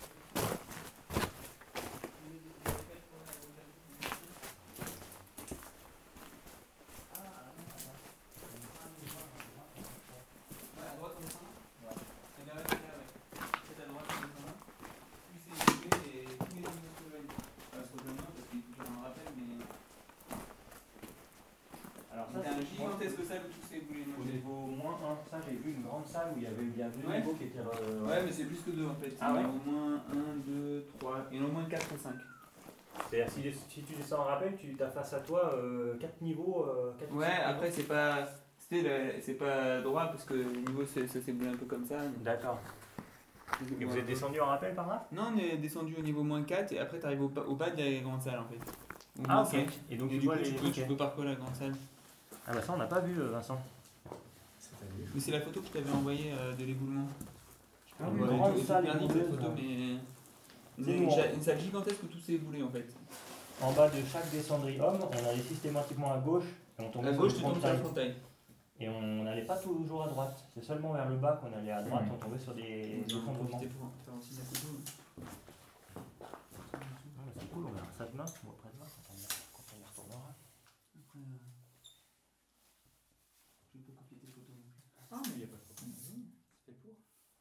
Saint-Martin-le-Vinoux, France - Mine drops
Into an underground cement mine, water is falling on rocks. It makes calcite concretions.
27 March 2017, 15:00